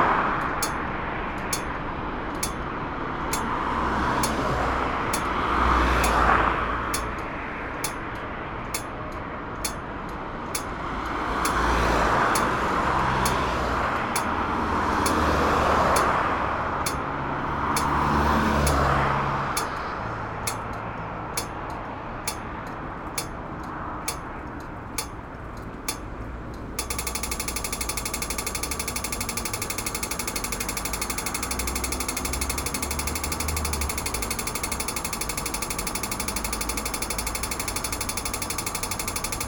Brugge, België - Red light signal
During an heavy traffic at the Katelijnepoort, a red light signal sounds the traffic for blind people. The swing bridge sounds its alarm because a barge is approaching.